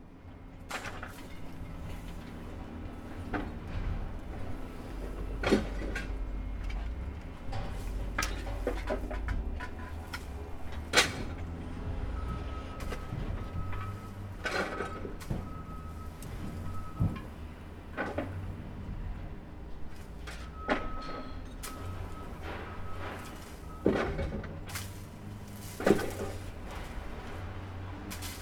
neoscenes: dumping a load of stuff